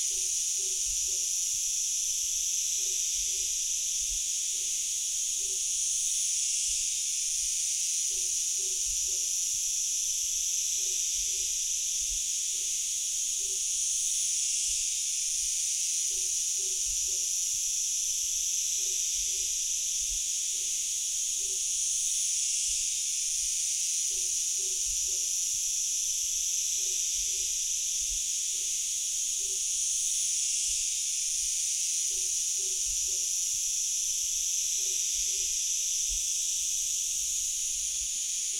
Pocinho, Portugal - Cigarras no Pocinho
O som de centenas de cigarras na are do Pocinho. Mapa Sonoro do Rio Douro. Hundreds of cicadas near Pocinho, Portugal. Douro River Sound Map
Vila Nova de Foz Côa, Portugal, 12 August 2010, 10:00